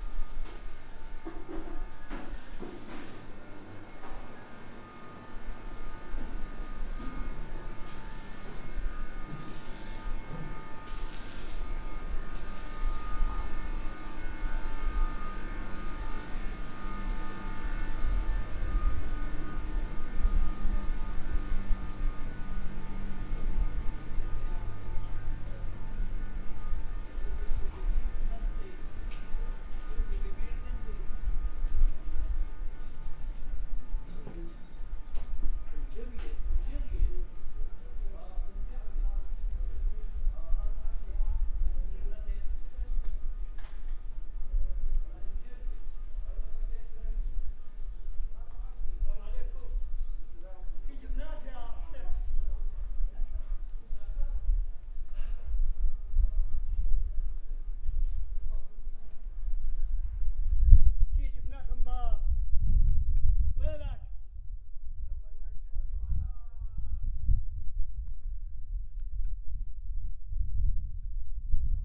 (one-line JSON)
{"title": "Shuk ha-Katsavim St, Jerusalem - Suq", "date": "2015-03-20 08:30:00", "description": "The Suq in the Old City of Jerusalem. Early in the morning, most of the stores are still closed but a few merchants have already started working.", "latitude": "31.78", "longitude": "35.23", "altitude": "761", "timezone": "Asia/Hebron"}